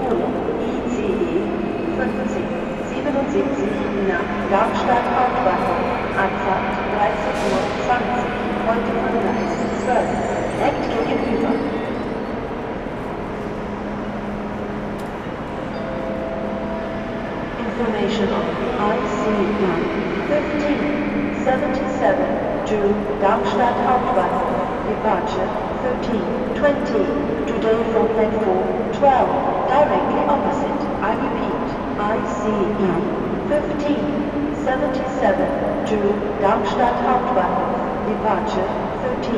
Frankfurt (Main) Hauptbahnhof, Gleis - 27. März 2020 Gleis 10 11
A train is arriving. But not very many people are leaving the train. An anouncement just stops without telling when the train to Neuwied is leaving, kind of significant for the situation. Perhaps there is no 'Abfahrt'... Later the sound for the anouncement is repeted twice, to reassure the listeners? The microphone walks back to the platform that connects all platforms. It is a little bit more busy. A lot of anouncements for other trains are made. There are a lot of suitcases, but different from the days before Corona you can count them. Another train is arriving. Some people are leaving, again a lot of trolleys. Some passengers are arguing. An anouncement anounces a train to Darmstadt, on the other track a train to Berlin is anounced by text, but the text vanishes and the train to Berlin on track 12 becomes the train to Darmstadt formerly on track 13. Nearly nobody is boarding. At least the train from Wächtersback is arriving.
Hessen, Deutschland